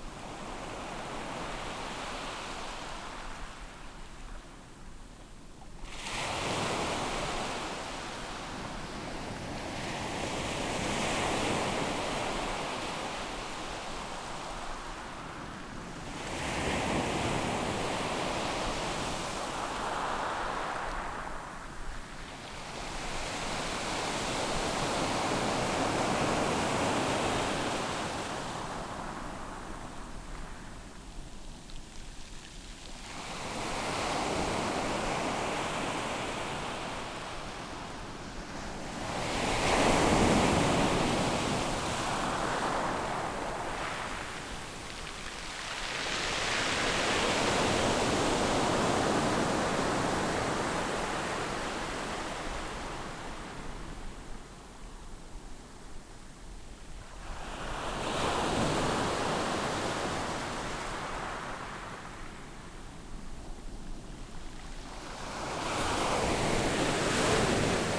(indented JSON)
{
  "title": "Taitung City, Taiwan - Sound of the waves",
  "date": "2014-09-04 16:11:00",
  "description": "At the seaside, Sound of the waves, Very hot weather\nZoom H6 XY",
  "latitude": "22.70",
  "longitude": "121.09",
  "altitude": "2",
  "timezone": "Asia/Taipei"
}